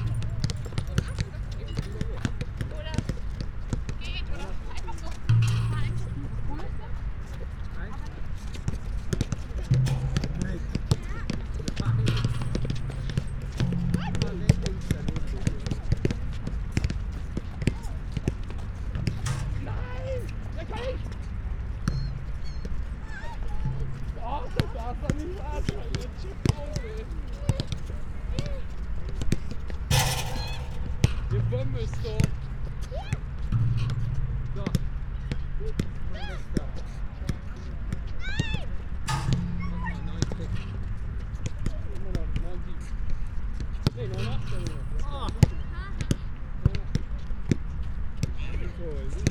park, Venloer Str./ Kanalstr., Köln - basket ball player
place revisited: kids and parents playing basket ball on a sunny Sunday afternoon in winter, 10°C. nice sound of the ball hitting basket and bars
(PCM D50, Primo EM172)
Germany, 5 January, 14:00